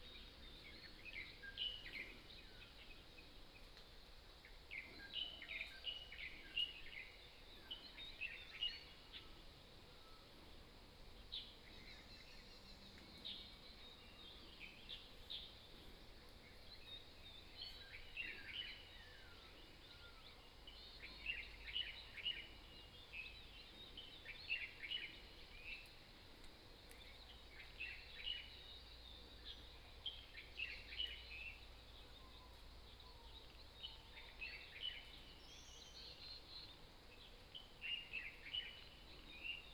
TaoMi Village, Nantou County - Birdsong
Birdsong
Binaural recordings
Sony PCM D100+ Soundman OKM II
Puli Township, Nantou County, Taiwan